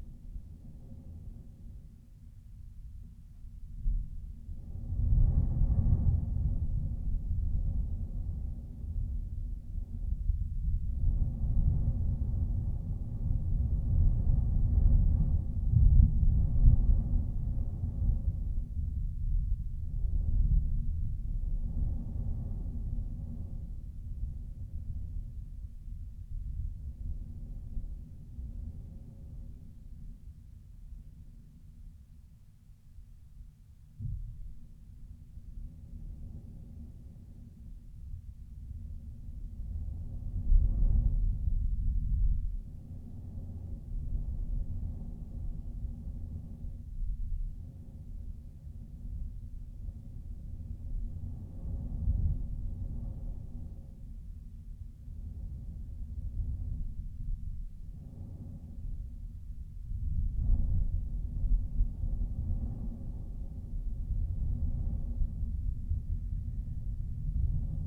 Luttons, UK - fires out ... mics in ...
fires out ... mics in ... lavalier mics in the stove and the sound of the draught up the chimney ...
Helperthorpe, Malton, UK, 12 March 2019